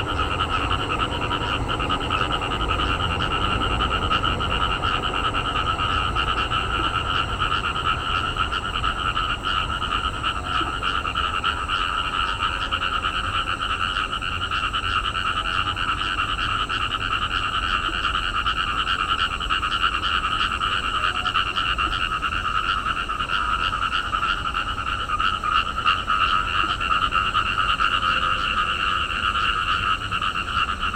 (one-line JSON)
{"title": "zhuwei, Tamsui Dist., New Taipei City - Frog chirping", "date": "2012-04-19 19:45:00", "description": "Frog calls, garbage truck arrived, traffic sound\nSony PCM D50", "latitude": "25.14", "longitude": "121.46", "altitude": "4", "timezone": "Asia/Taipei"}